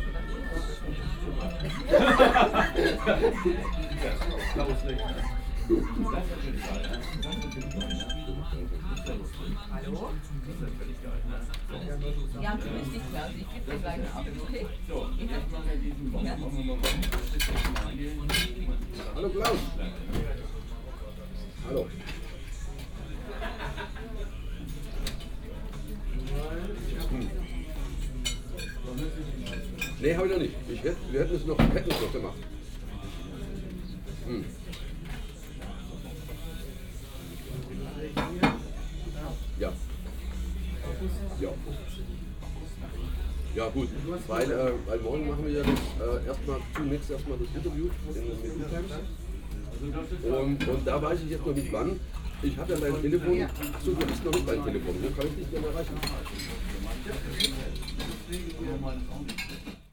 cafe bar, familiar atmosphere, people having breakfast, dinner or beer.
Hamburg, Germany